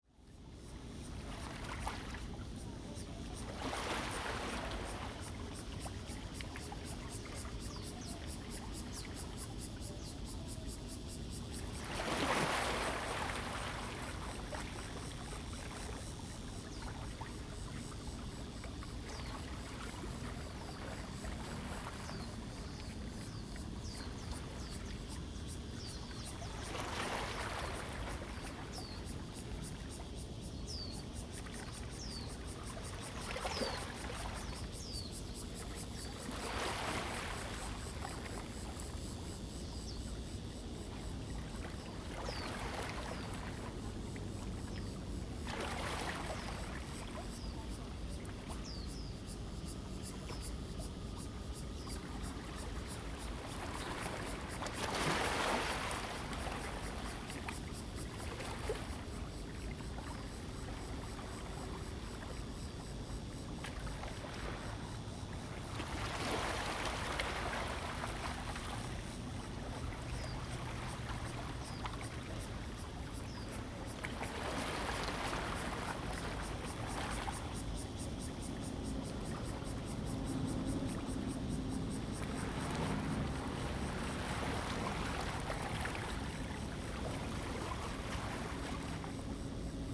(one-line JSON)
{"title": "淡水河, New Taipei City - Morning in the river", "date": "2015-07-18 06:19:00", "description": "Tide, In the dock, There are boats on the river\nZoom H2n MS+XY", "latitude": "25.17", "longitude": "121.43", "altitude": "3", "timezone": "Asia/Taipei"}